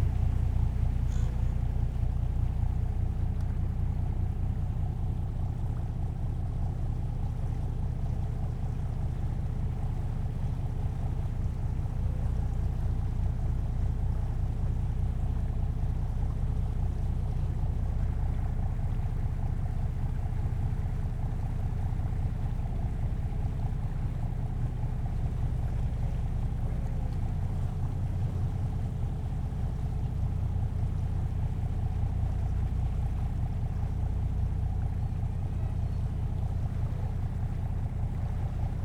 Crewe St, Seahouses, UK - Grey Seal Cruise ...
Grey Seal cruise ... entering Sea Houses harbour ... background noise ... lavalier mics clipped to baseball cap ...